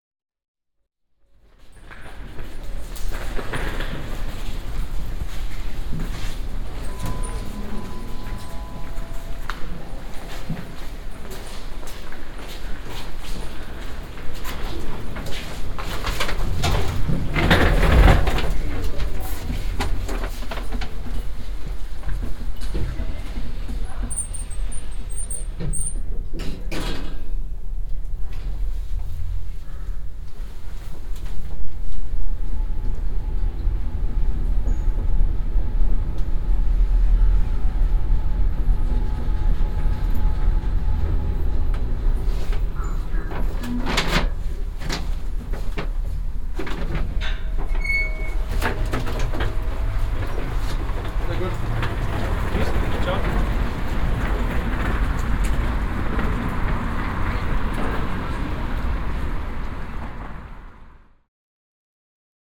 Schottenring, Wien, Austria - (198) Metro station elevator
Binaural recording of a metro station elevator ride.
Recorded with Soundman OKM + Sony D100
Österreich